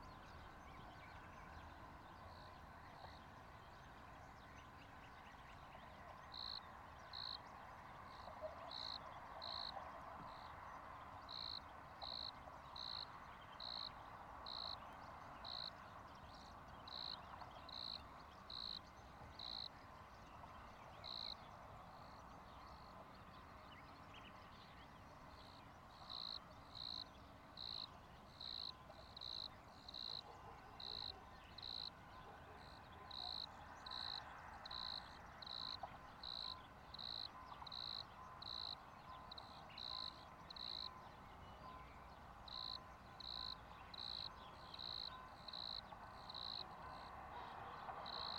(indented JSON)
{
  "title": "Rijeka, Croatia, Grasshoppers - Grasshoppers, Birds",
  "date": "2013-05-19 19:40:00",
  "latitude": "45.33",
  "longitude": "14.47",
  "altitude": "150",
  "timezone": "Europe/Zagreb"
}